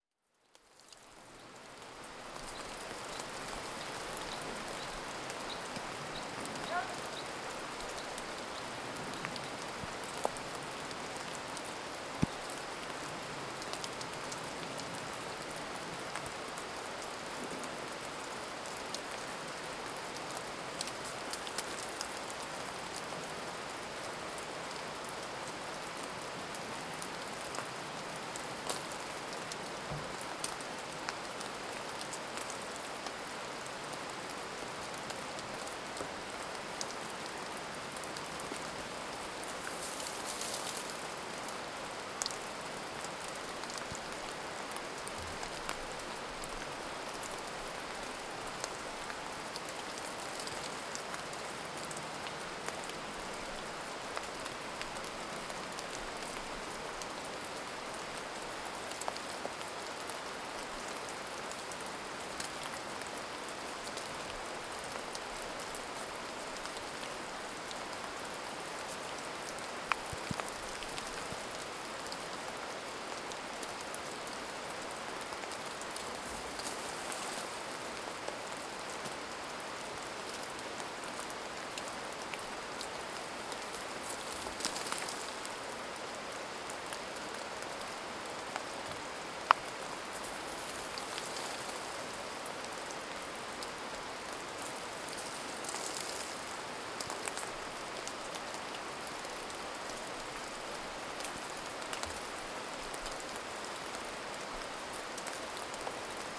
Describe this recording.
Recording in Cervena in the Sumava National Park, Czech Republic. A winter's morning, snow slowly melting and falling from trees next to a small stream.